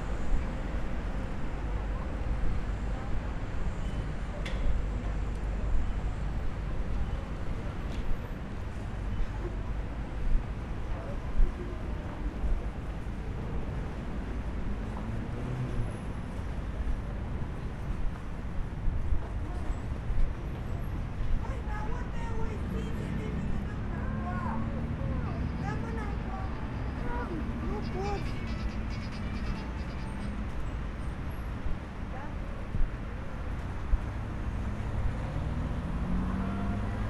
Piata Uniri, walking the area
Walking around Piata Uniri, traffic, construction works, people
Romania